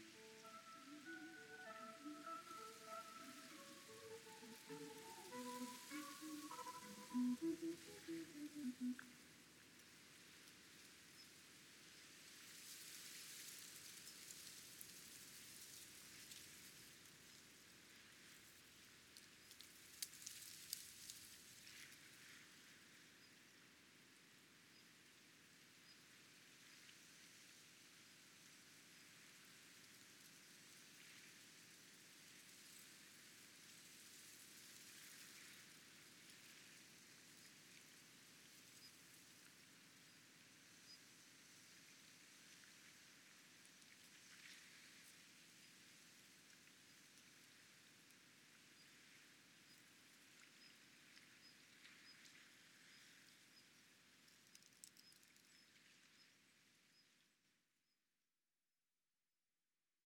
Nozaki Island is now uninhabited but the loudspeaker emergency warning system is maintained for visitors.